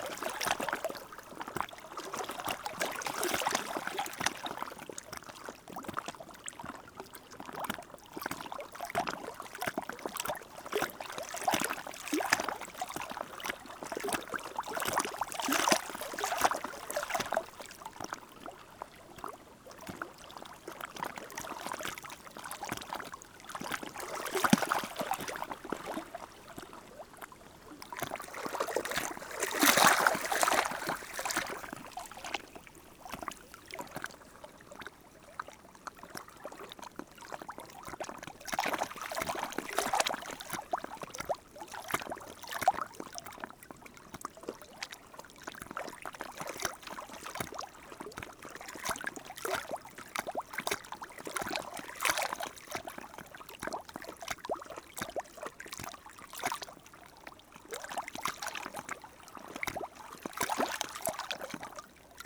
Saint-Pierre-de-Manneville, France - High tide
The Seine river during the high tide. The river is going to the wrong way, towards Paris. During the low tide, there's a reversion.